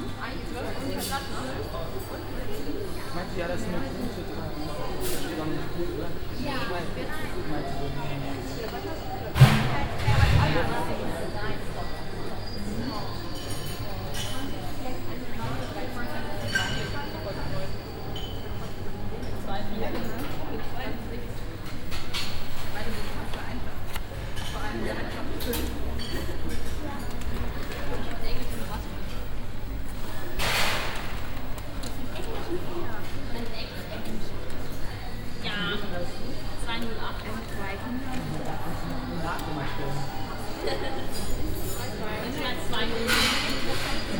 Eingangshalle zu einem Kino Center, Hintergrundsmuzak, Werbeankündigungen, Warteschlange an der Kasse, Ticket- Kommunikationen
soundmap nrw: social ambiences/ listen to the people - in & outdoor nearfield recordings
24 January 2009, ~17:00